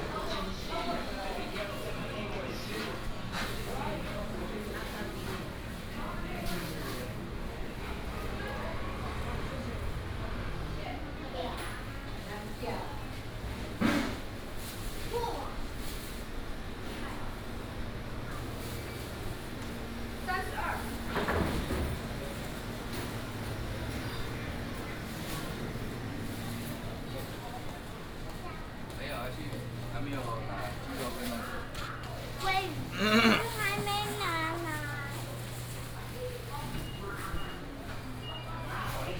新庄子公有零售市場, Xinfeng Township - walking in the traditional market
Walking in the traditional market, vendors peddling, housewives bargaining, and girls gossiping
26 August 2017, ~08:00